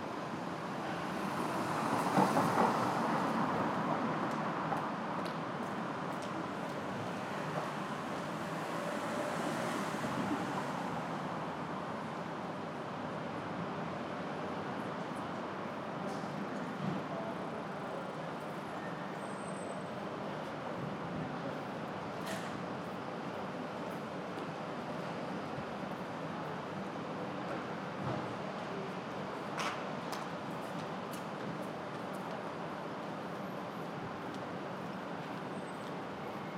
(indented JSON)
{
  "title": "Post Office near Market Street. - Alleyway Pulse",
  "date": "2010-09-16 18:05:00",
  "description": "Recorded on an Alleyway jsut next to a Post Office in Manchester Town Centre.",
  "latitude": "53.48",
  "longitude": "-2.24",
  "altitude": "56",
  "timezone": "Europe/London"
}